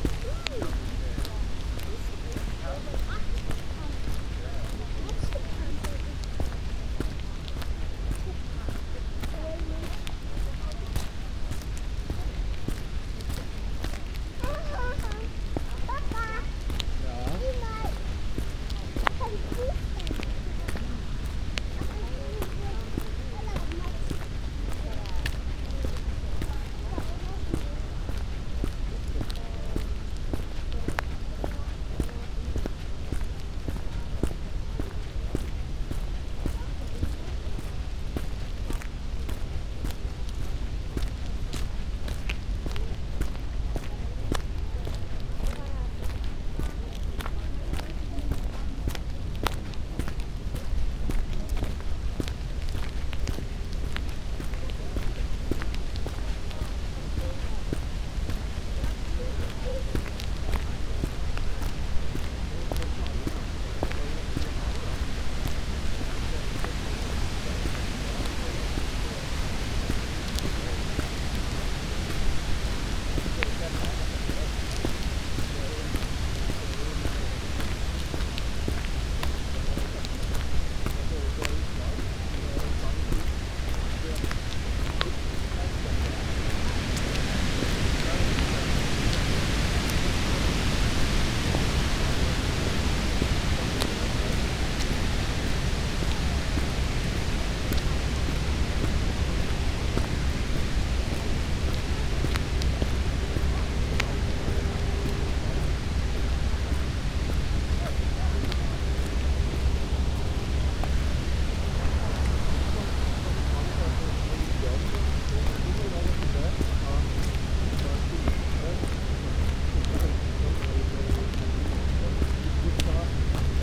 Plänterwald, Berlin, Nemčija - walking, wind
forest path, wind in high trees, leaves changing their colors, sounds of cement factory slowly appear
Sonopoetic paths Berlin
Berlin, Germany, 6 September 2015, 16:47